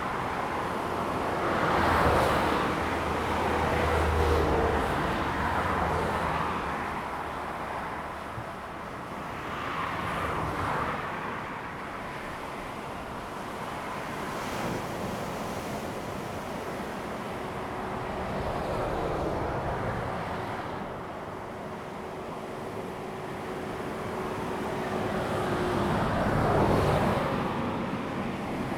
南興村, Dawu Township - Traffic and the waves
Sound of the waves, In the side of the road, Traffic Sound, The weather is very hot
Zoom H2n MS +XY
Taitung County, Taiwan, 5 September 2014, ~16:00